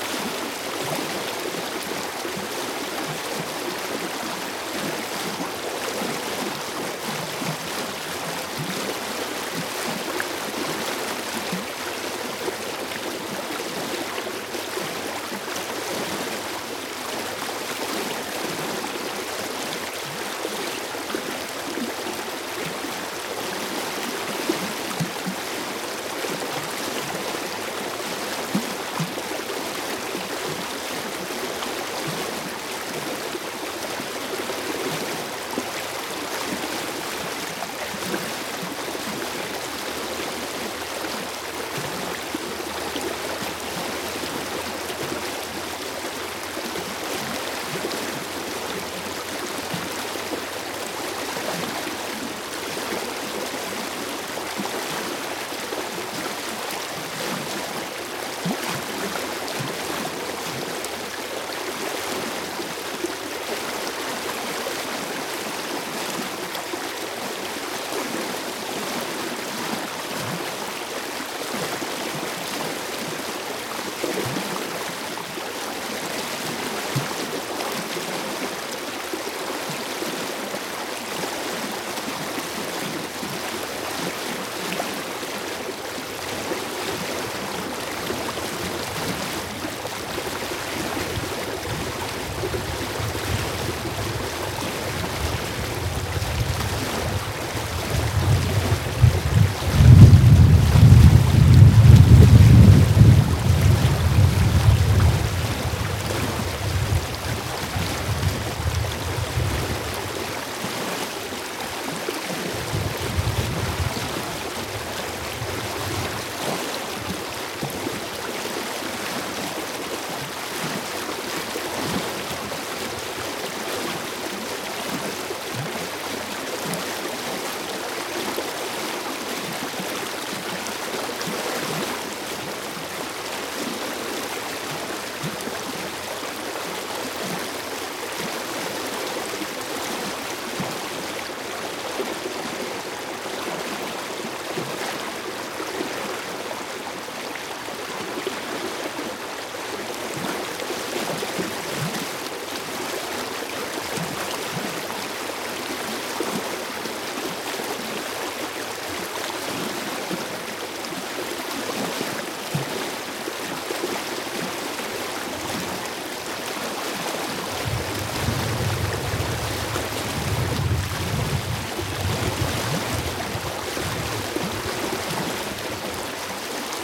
düssel nahe fussgängerbrücke aus wald kommend - mono direktmikrophonierung -aufnahme aus dem frühjahr 2007
soundmap nrw:
social ambiences/ listen to the people - in & outdoor nearfield recordings